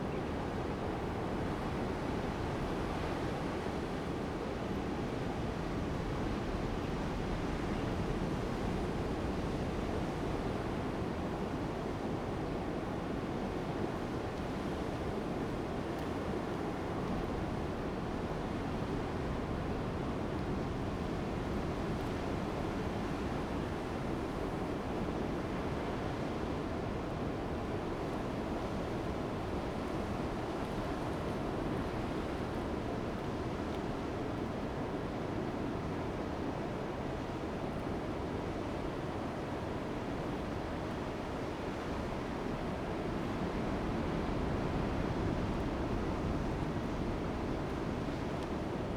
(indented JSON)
{
  "title": "港仔, 海墘路 Manzhou Township - Behind the seawall",
  "date": "2018-04-02 14:24:00",
  "description": "Behind the seawall, Bird call, Sound of the waves, Wind noise\nZoom H2n MS+XY",
  "latitude": "22.13",
  "longitude": "120.89",
  "altitude": "6",
  "timezone": "Asia/Taipei"
}